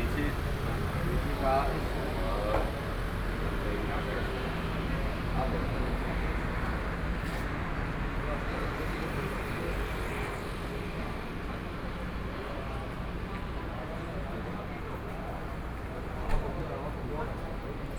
In front of the coffee shop, Traffic Sound
Kaohsiung City, Taiwan, 14 May 2014